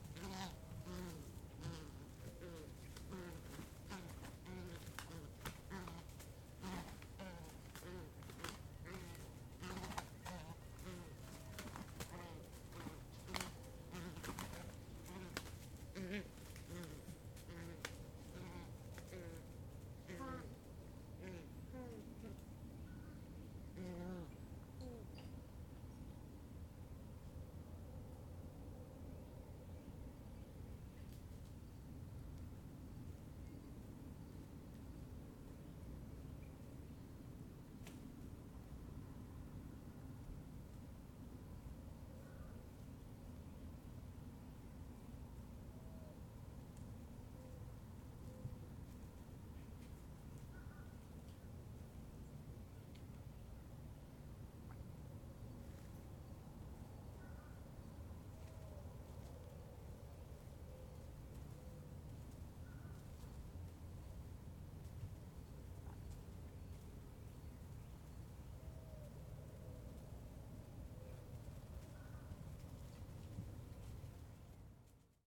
Durante Park, Longboat Key, Florida, USA - Battling Ibises
Two American White Ibises battling while foraging for food. They then return to pecking at the ground for insects.
Florida, United States, 24 March